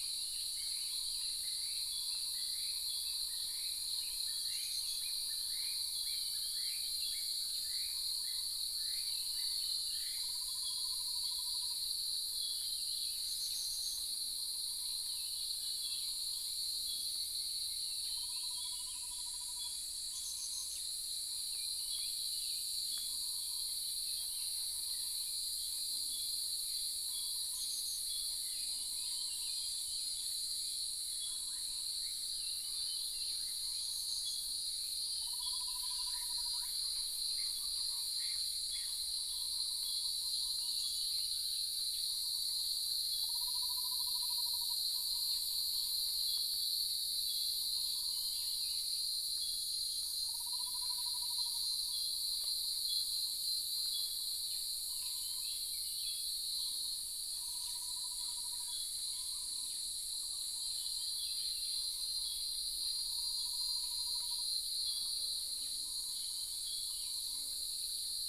種瓜路.草楠, 桃米里 - early morning
in the wetlands, Bird sounds, Insects sounds, Cicada sounds